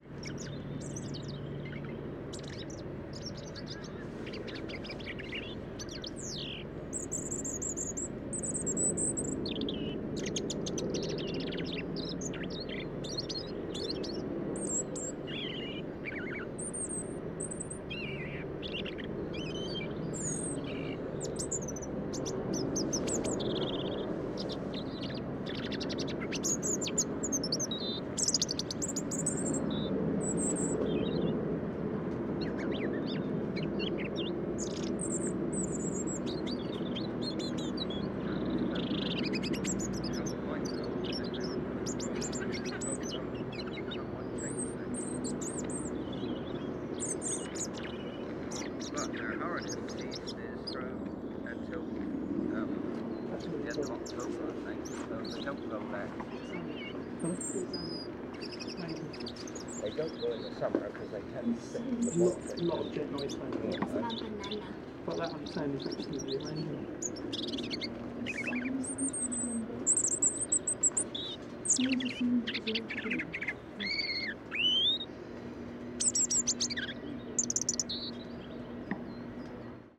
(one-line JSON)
{"title": "Feock, Cornwall, UK - Robin Singing In Trelissick Gardens", "date": "2013-03-04 11:33:00", "description": "A robin came and sat and sang about two feet away from us while we were gathering sounds in Trelissick Garden. It's hard to believe that all of these sounds and pitches come from one tiny bird!", "latitude": "50.22", "longitude": "-5.03", "altitude": "45", "timezone": "Europe/London"}